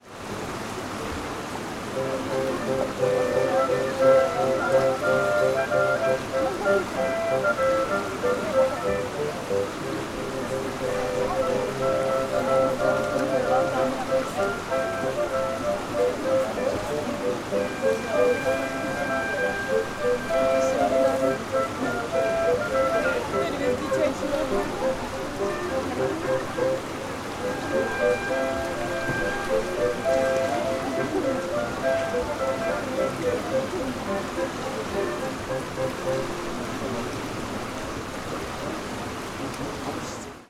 Český Krumlov, Tschechische Republik - Straßenmusik
Český Krumlov, Tschechische Republik, Straßenmusik